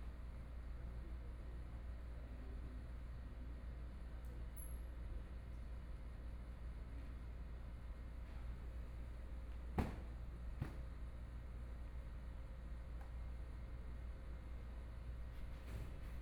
民安里, Taipei City - Small park
Temporary nature of the small park, Traffic Sound, Binaural recordings, Zoom H4n+ Soundman OKM II
Taipei City, Taiwan, February 2014